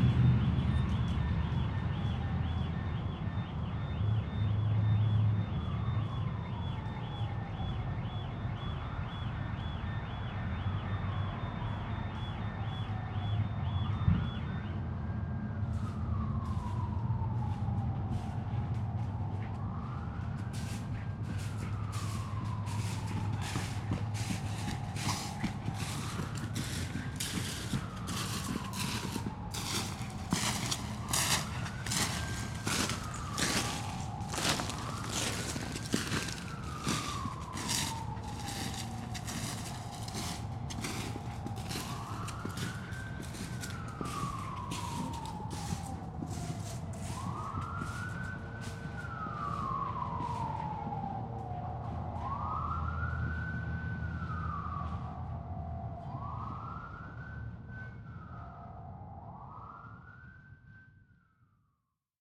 {
  "title": "Colorado Springs, CO, USA - Blizzard, Sirens & Footsteps",
  "date": "2015-11-27 12:50:00",
  "description": "Recorded with a pair of DPA 4060s and a Marantz PMD661.",
  "latitude": "38.86",
  "longitude": "-104.81",
  "altitude": "1865",
  "timezone": "America/Denver"
}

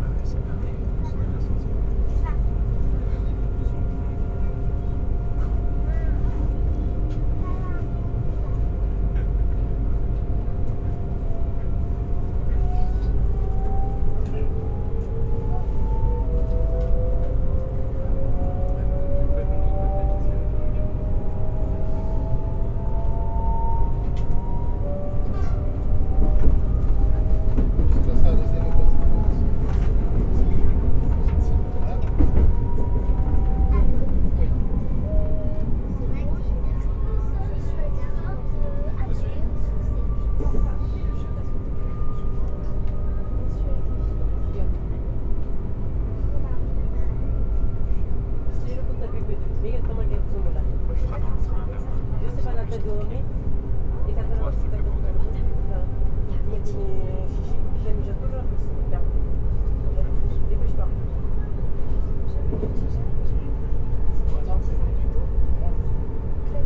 {
  "title": "Rouen, France - Rouen train",
  "date": "2016-07-23 14:59:00",
  "description": "Into the train from Rouen to Paris St-Lazare, the first minutes going out from Rouen.",
  "latitude": "49.45",
  "longitude": "1.10",
  "altitude": "38",
  "timezone": "Europe/Paris"
}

